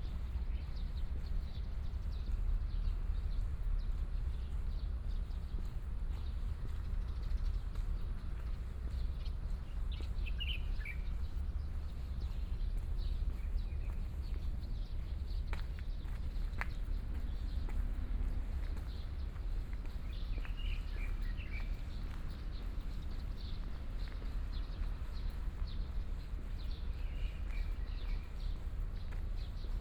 鹽埕區南端里, Kaohsiung City - walking
Walking through the park and parking, Birds singing, Morning park